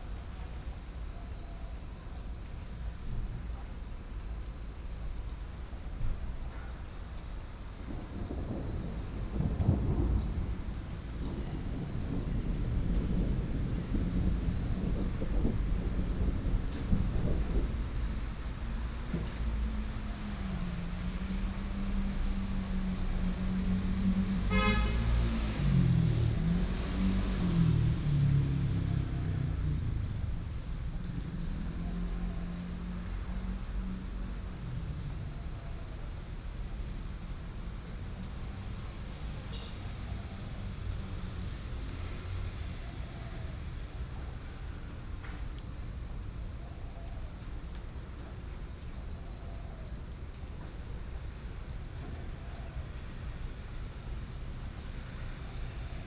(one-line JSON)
{"title": "民榮公園, Da’an Dist., Taipei City - in the Park", "date": "2015-07-24 15:51:00", "description": "Bird calls, Thunder, Traffic Sound", "latitude": "25.04", "longitude": "121.54", "altitude": "15", "timezone": "Asia/Taipei"}